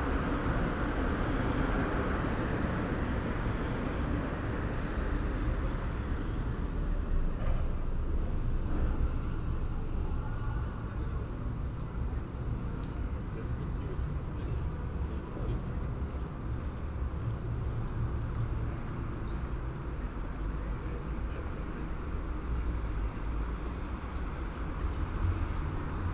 Kaunas, Lithuania, Liberty Avenue night
Laisvės Alėja (literally Liberty Boulevard or Liberty Avenue) is a prominent pedestrian street in the city of Kaunas. Night time, listening through open hostel window.
Kauno apskritis, Lietuva, 25 July 2022, ~23:00